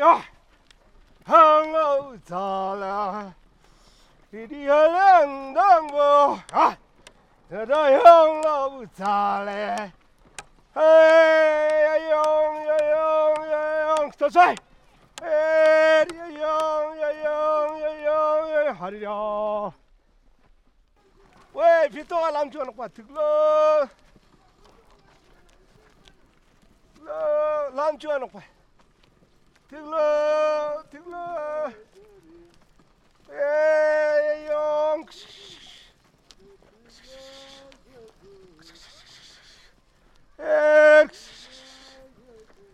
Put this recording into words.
Leh - Ladak - Inde, Les semences ; fin de journée, un agriculteur, son fils et un attelage... est-ce un chant des semences ? Fostex FR2 + AudioTechnica AT825